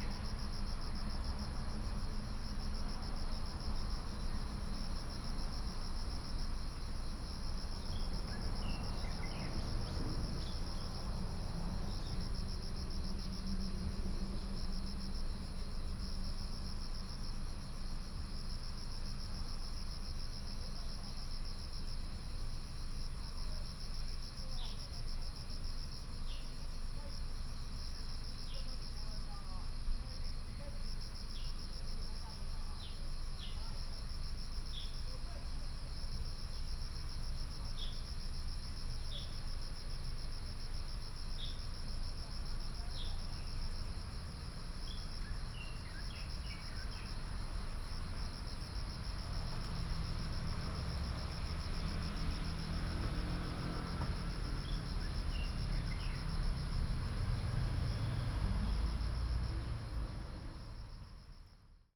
鳴鳳公園, Guanxi Township - in the Park
in the Park, Traffic sound, Insects sound, sound of the birds
Hsinchu County, Guanxi Township, 竹28鄉道7號, 25 July 2017